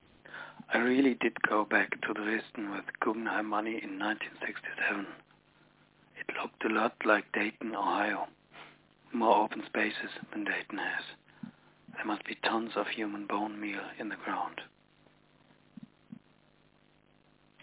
Kurt Vonnegut R.I.P. - Slaughterhouse-Five, K. Vonnegut
Kurt Vonnegut R.I.P.
Germany